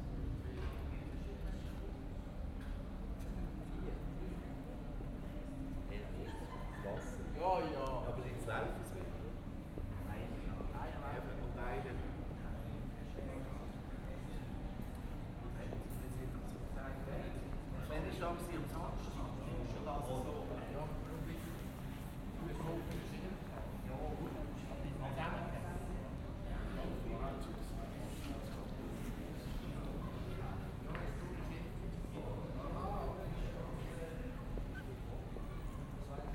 Aarau, Center at night, Schweiz - night1
Walk through the nightly streets of the pedestrian zones of Aarau, not very many people left
Aarau, Switzerland